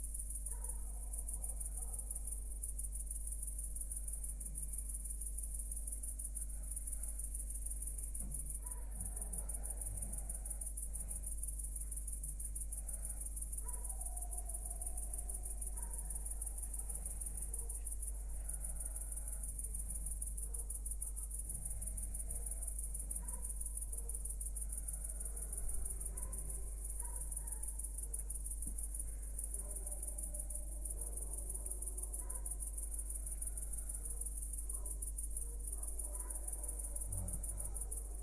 Pod Lipą, Borsuki, Poland - (835a AB) midnight crickets
Recording of midnight crickets, some tenants snoring (could be mine), and some unknown machine pitch.
Recorded in AB stereo (17cm wide) with Sennheiser MKH8020 on Sound Devices MixPre6-II